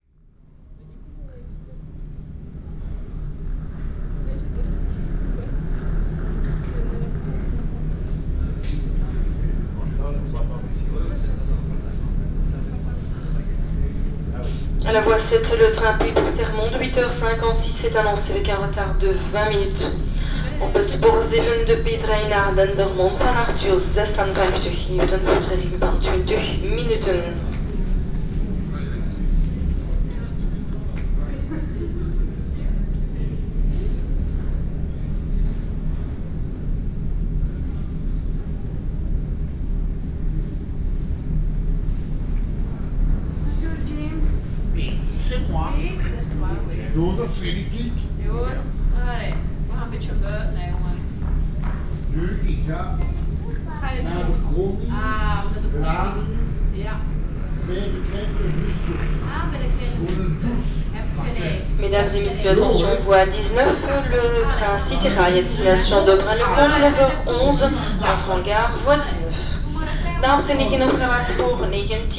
Brussels, Midi Station, a homeless person cant sleep here
Brussels, Midi Station, a homeless person can sleep here